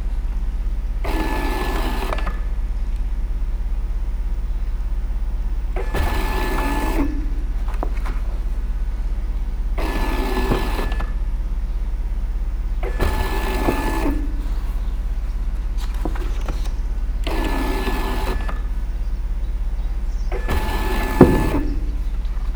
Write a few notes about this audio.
A DIY Bookscanner in operation at the Calafou Hacklab. The scanner was designed by Voja Antonic for the Hack The Biblio project. Soundman OKM II Classic Studio -> Olympus LS-11